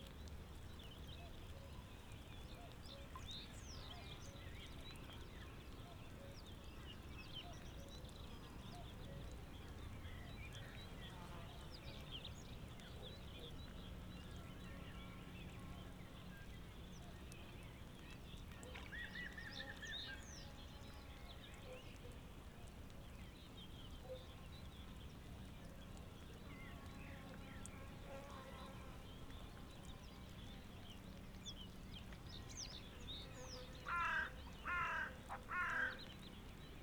Schönhausen, Elbe - pond, light rain
little pond near river elbe, within the flooding zone, light rain, insects, cuckoos, a frog, wind in reed, a high speed train passes in the distance
(SD702, Audio Technica BP4025)